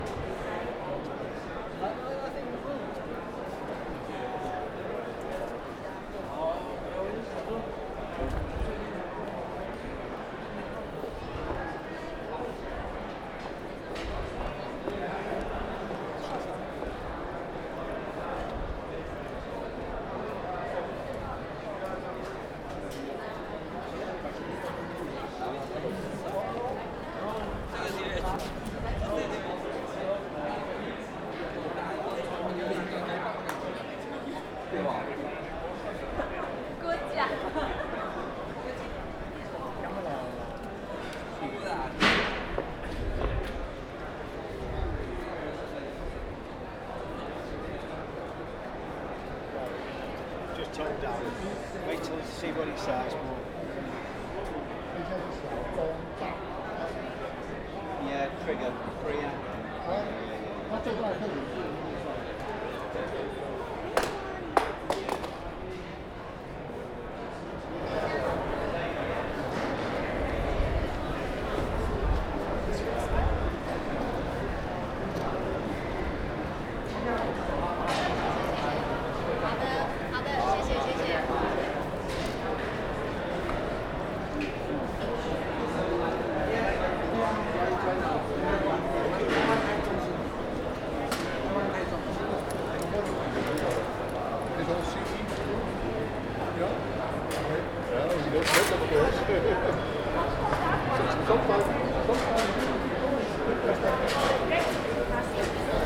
Messe Deutz, Köln, Deutschland - Eisenwarenmesse / Ironware fair
Schritte, englische und asiatische Stimmen, etwas fällt vom Tisch, die Halle rauscht vor Hintergrundlärm.
Steps, English and Asian voices, something falls off the table, the hall rushes with background noise.